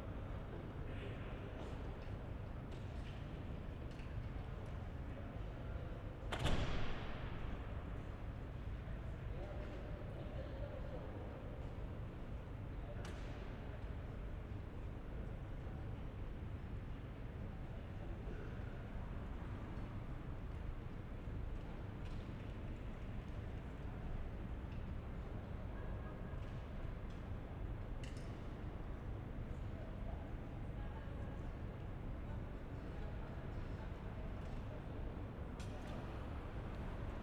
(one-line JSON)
{"title": "berlin, friedrichstr., kontorenhaus - entry hall", "date": "2010-12-23 12:40:00", "description": "a few meters away from the previous position, sides changed", "latitude": "52.51", "longitude": "13.39", "altitude": "45", "timezone": "Europe/Berlin"}